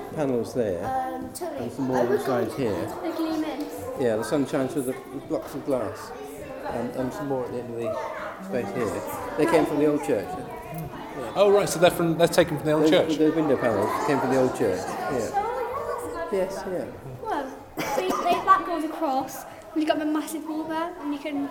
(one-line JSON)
{"title": "Efford Walk Two: Windows in church - Windows in church", "date": "2010-09-24 17:49:00", "latitude": "50.39", "longitude": "-4.11", "altitude": "80", "timezone": "Europe/London"}